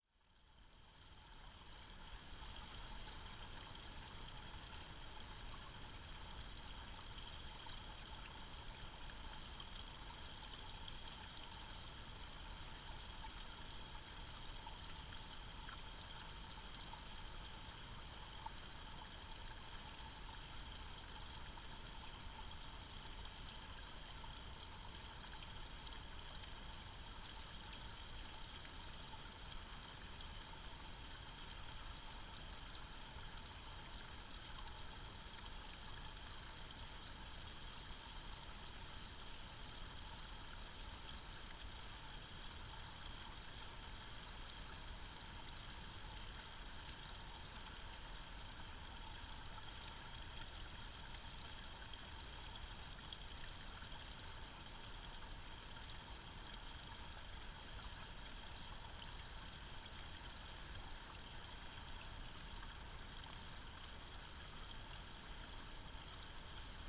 hydrophone recording of a water fountain
20 February 2010, ~14:00